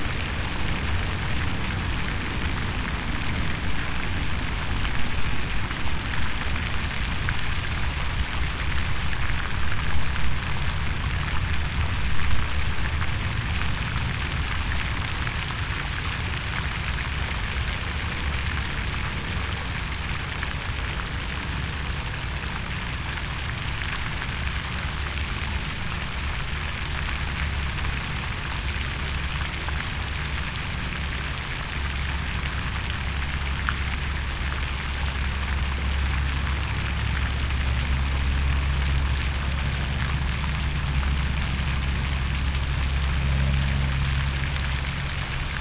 Village Green Cir SE, Smyrna, GA, USA - Smyrna Market Village Pond
This is a pond with a fountain located in the Smyrna Market Village which is frequented by water fowl. It's near a road and sidewalk, so you can hear traffic sounds and bikes. There was a visitor sitting in the swing bench on the left while I was taking the recording.
Recorded with Tascam Dr-100mkiii with dead cat wind screen.